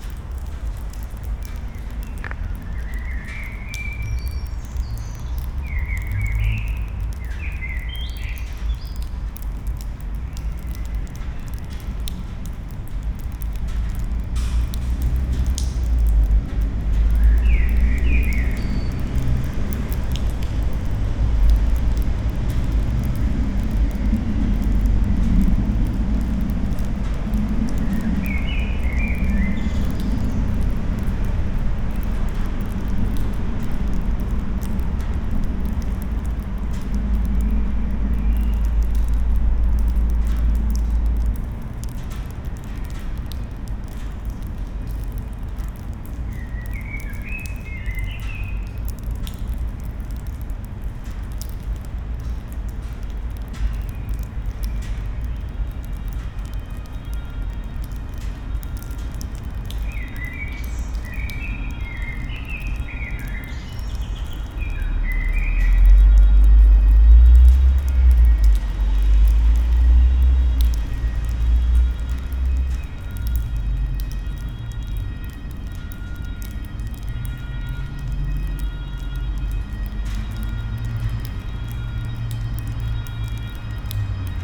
dripping water below Pont Adolphe, sound of traffic
(Olympus LS5, Primo EM172)